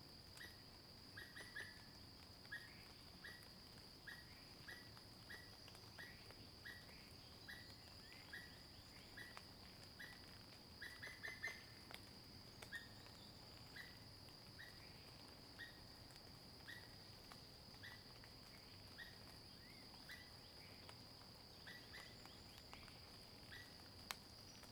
水上巷, 埔里鎮桃米里, Taiwan - In the bamboo forest
In the bamboo forest, birds sound, water droplets
Zoom H2n MS+XY
21 April 2016, ~06:00